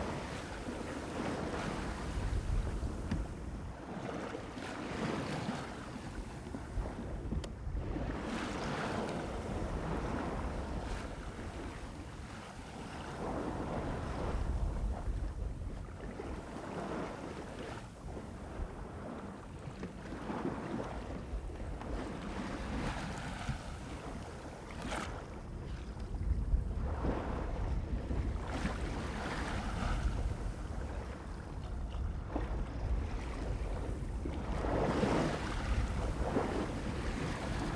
Plouézec, France - Navigation voilier - 23.04.22
Navigation en voilier au large de Paimpol. Allure du prêt, mer relativement calme. Enregistré avec un coupe ORTF de Sennheiser MKH40 coiffées de Rycote Baby Ball Windjammer et d'une Sound Devices MixPre3.